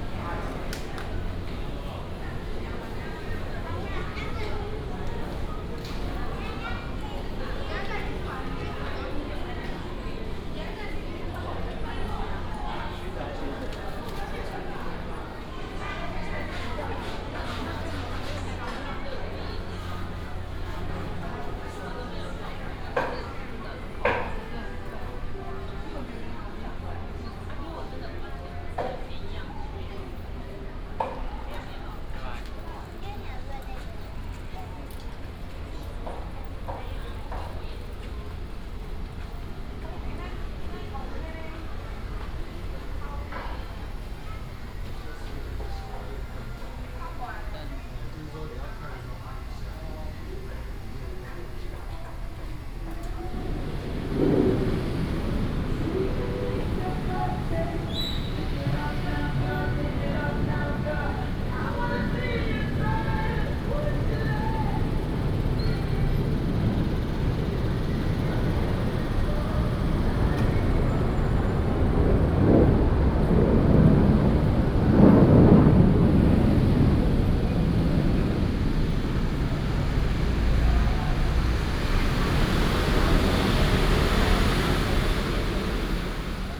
中正示範市場, Hsinchu City - New market
in the new market, vendors peddling, Combined with shopping malls and markets
Hsinchu City, Taiwan, 26 August 2017